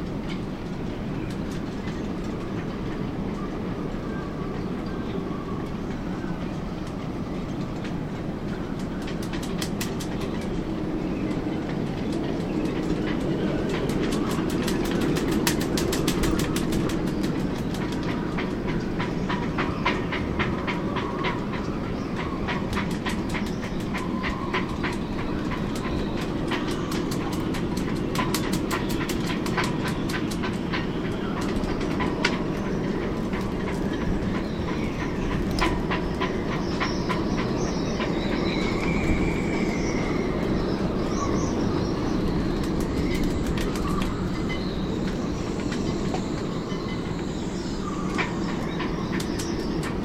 workum, het zool: marina, berth h - the city, the country & me: marina, aboard a sailing yacht
stormy night (force 7-8), the wind is flapping the tarp
the city, the country & me: july 20, 2008